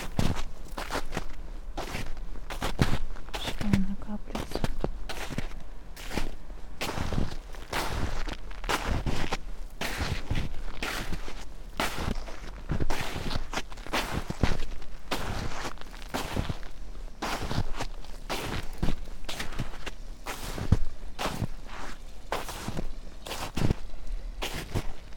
sonopoetic path, Maribor, Slovenia - walking poem, drops of life
snow, steps, spoken words, whisperings, small stream, distant traffic noise